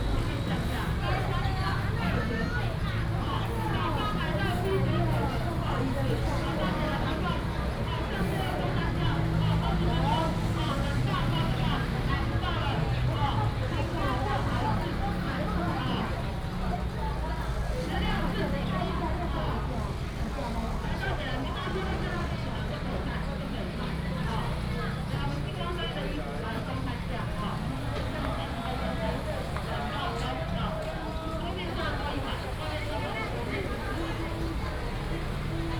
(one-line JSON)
{"title": "Zhongshan Rd., Dalin Township - vendors peddling", "date": "2018-02-15 10:27:00", "description": "vendors peddling, Market selling sound, lunar New Year, traffic sound\nBinaural recordings, Sony PCM D100+ Soundman OKM II", "latitude": "23.60", "longitude": "120.46", "altitude": "37", "timezone": "Asia/Taipei"}